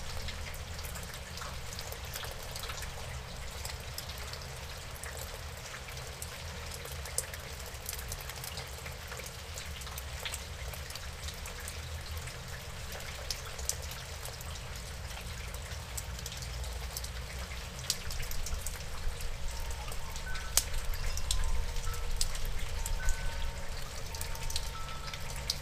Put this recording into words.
I left my Zoom H2 under the eave for some minutes while rain was falling. There's a distant plane, some very weird-sounding dogs barking, some birds, and lots of drips and drops everywhere.